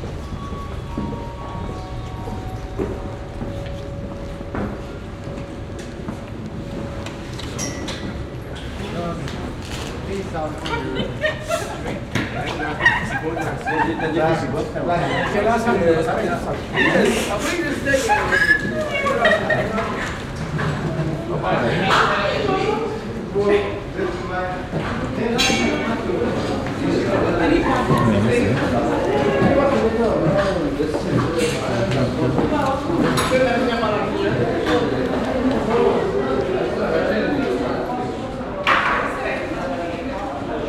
Unterbilk, Düsseldorf, Deutschland - Düsseldorf, Rheinturm, entrance and elevator
Entering the Rheintower through a ticket gate and then entering the lift and moving upwards to the visitor platform. The sound of the ticket gate followed by the sounds of visitors, the sound of the elevator door and an automatic voice inside the lift.
This recording is part of the intermedia sound art exhibition project - sonic states
soundmap nrw - sonic states, social ambiences, art places and topographic field recordings
Düsseldorf, Germany, 22 November 2012, 14:20